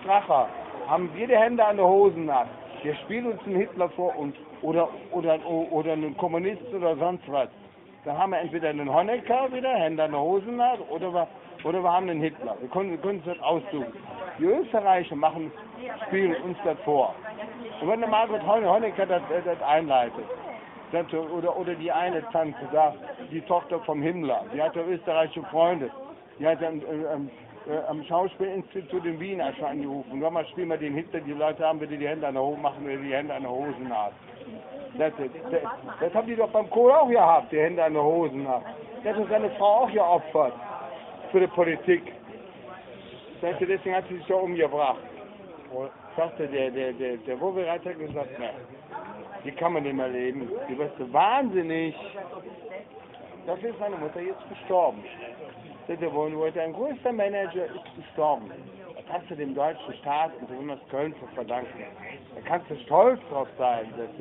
berlin, ohlauer straße: vor club - the city, the country & me: homeless person
conspiracy theory of a homeless from cologne (mobile phone recording)
the city, the country & me: july 19, 2011